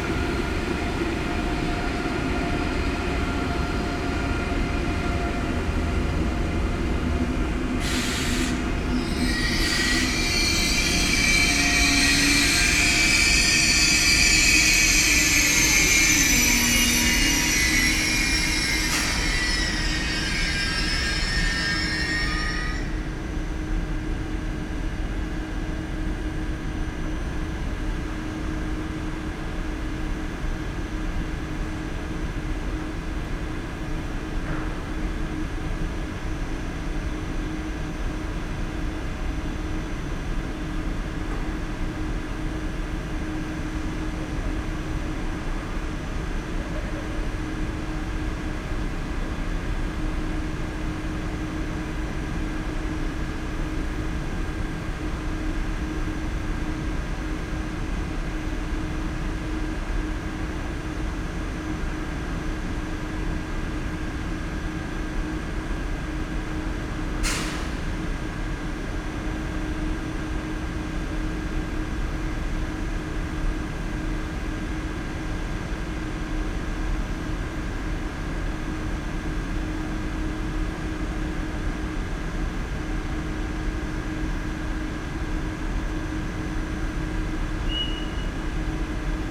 ICE station, Limburg an der Lahn, Deutschland - train arrives
station ambience, ICE high speed train arrives and stops with heavily squeaking brakes
(Sony PCM D50, DPA4060)